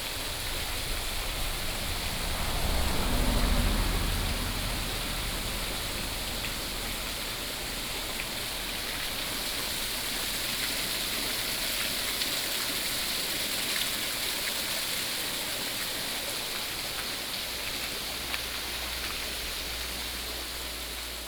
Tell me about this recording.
Mountain waterway, traffic sound